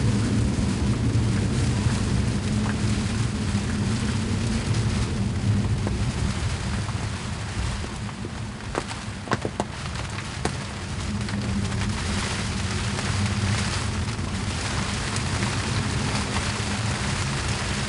Isleornsay, Skye, Scotland, UK - Waiting Out a Storm: Anchored (Part 1)
Recorded with a stereo pair of DPA 4060s into a SoundDevices MixPre-3.